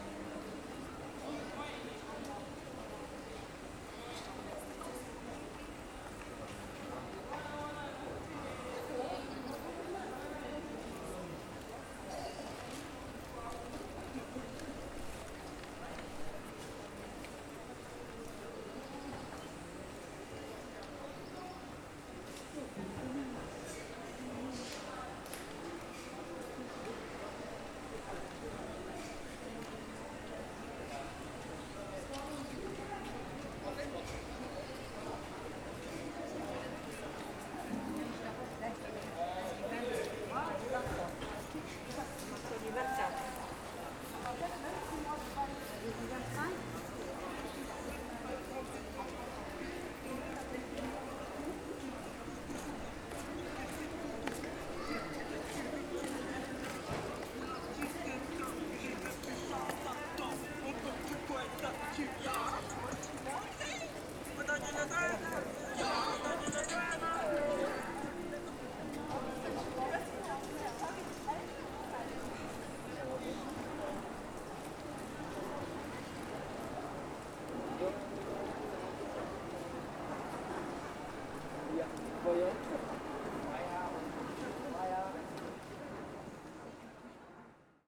Galerie Marchande Rosalie, Saint-Denis, France - Back of covered market
This recording is one of a series of recording mapping the changing soundscape of Saint-Denis (Recorded with the internal microphones of a Tascam DR-40).
May 2019